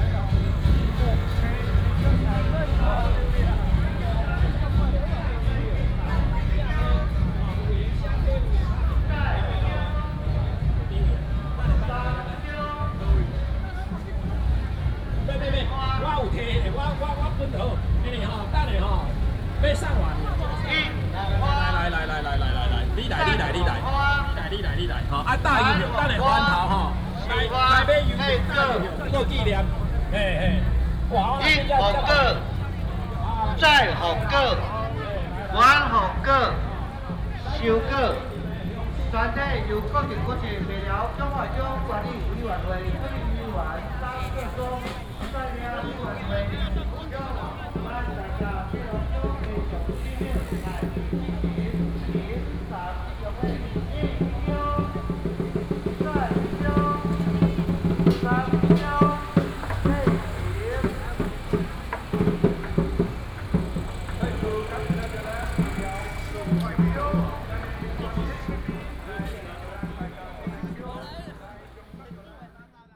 Shuntian Rd., 順天里 Dajia Dist. - Walking on the road
temple fair, Walking on the road
Taichung City, Taiwan